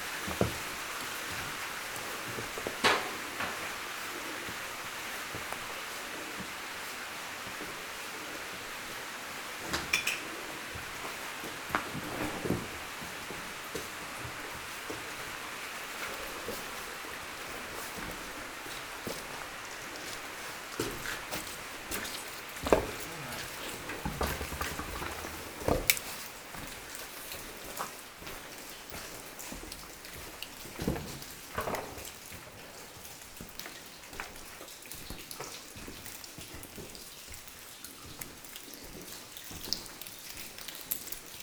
Rimogne, France - Walking into the inclined shaft
This is the very big inclined tunnel leading to the center of the underground quarry. I'm quietly walking, climbing the shaft. A lot of water flows everywhere.
11 February 2018, ~12:00